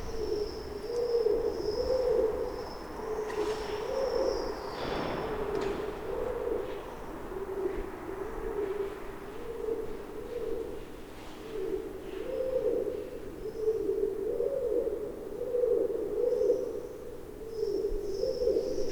Siilotie, Oulu, Finland - Pigeons inside a defunct workshop
Pigeons calling and flying inside a defunct workshop. You can also hear cars driving by and people speaking outside the workshop. Recorded with Zoom H5 with default X/Y capsule, noise removed in post.